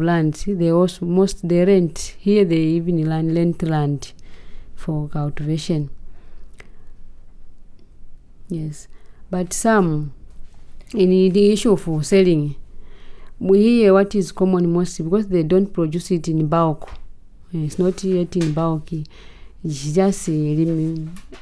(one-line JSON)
{"title": "office Community Development, Sinazongwe, Zambia - women this side and that side...", "date": "2016-08-17 10:55:00", "description": "...we are at the at the Civic Centre of Sinazongwe, \"the Boma\", talking to Mary Mwakoi and Victoria Citalu from the Department of Community Development… this clip is from the end of a longer conversation about women clubs and their activities in the area…. here, we are getting to talk about the limits of such activities and how contacts and exchange among the women across the waters, that is from Binga and from Sinazongwe might improve the lives of women on both sides of the Zambezi...\nmore from this interview:", "latitude": "-17.26", "longitude": "27.46", "altitude": "506", "timezone": "GMT+1"}